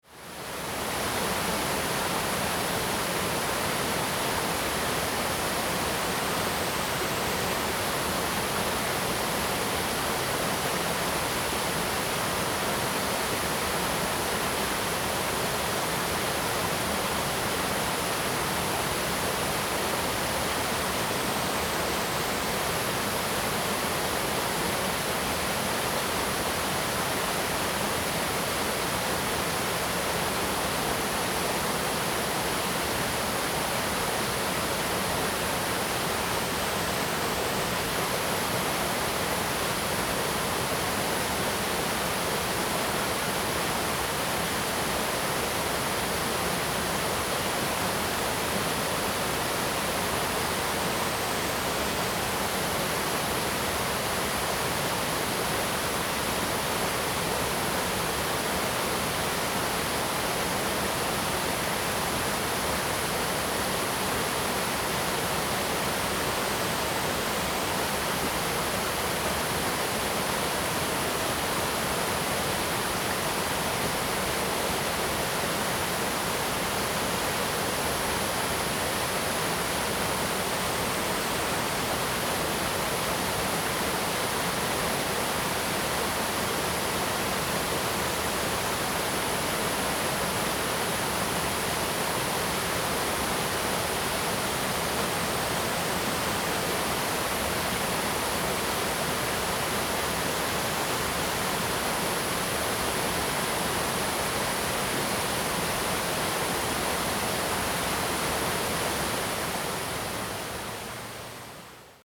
茅埔坑溪, 茅埔坑溼地公園 Puli Township - sound of water streams
sound of water streams
Zoom H2n MS+XY